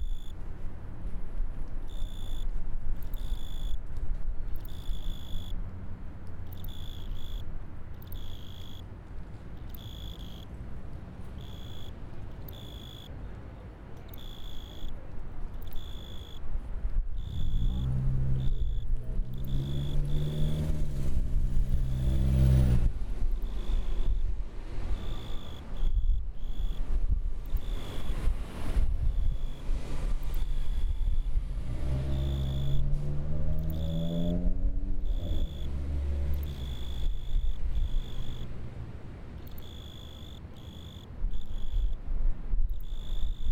Mid-Town Belvedere, Baltimore, MD, 美国 - Barnes&Noble's Grasshopper
12 September 2016, 13:23